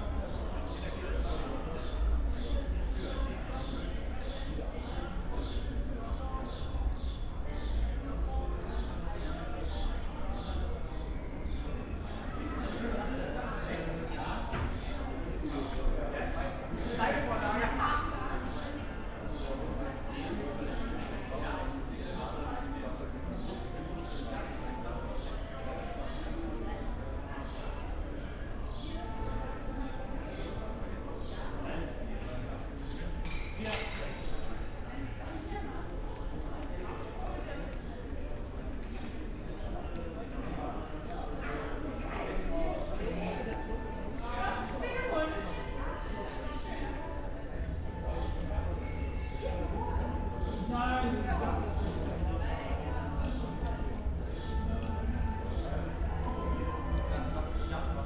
Unter Kahlenhausen, Köln, Deutschland - evening ambience near music school
evening ambience heard near Cologne School for Dance and Music
(Sony PCM D50, Primo Em172)